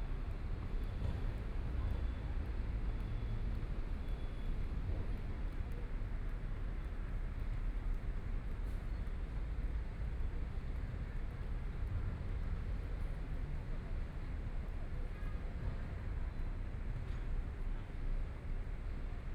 MingShui Park, Taipei City - in the Park
Night park, Traffic Sound
Please turn up the volume a little. Binaural recordings, Sony PCM D100+ Soundman OKM II
Zhongshan District, Taipei City, Taiwan